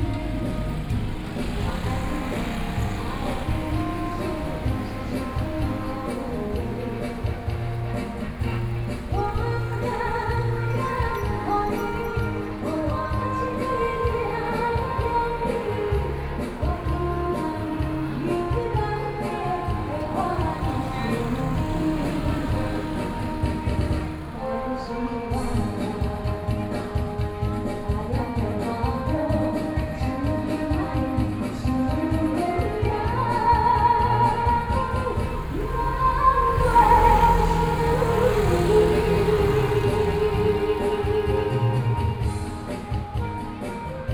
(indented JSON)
{
  "title": "Yuren Rd., Beitou Dist. - Community party",
  "date": "2013-09-30 19:23:00",
  "description": "Community party, Intersection, Traffic Noise, Sony PCM D50 + Soundman OKM II",
  "latitude": "25.13",
  "longitude": "121.50",
  "altitude": "11",
  "timezone": "Asia/Taipei"
}